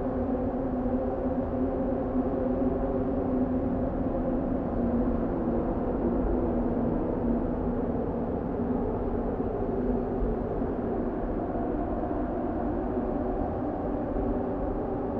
sound of bridge in a container under the bridge, which is possibly part of an art installation.
3 July 2010, 14:15